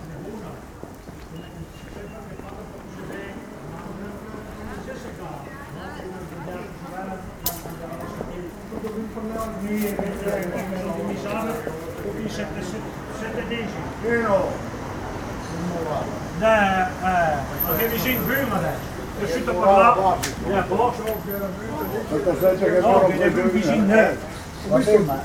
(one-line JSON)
{"title": "Carpiano (MI), Italy - Saturday morning on main street", "date": "2012-10-20 10:00:00", "description": "lazy autumn morning in the small village. People walking, Old men \"i vècc\" speaking dialect in front of the bar", "latitude": "45.34", "longitude": "9.27", "altitude": "91", "timezone": "Europe/Rome"}